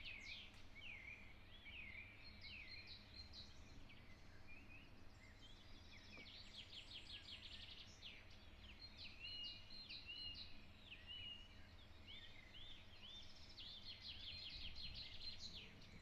ul. "Georgi Benkovski", Ribaritsa, Bulgaria - Birds singing in Ribaritsa
Early in the morning birds are singing in the village of Ribaritsa. Recorded with a Zoom H6 with the X/Z microphone.
Ловеч, Бългaрия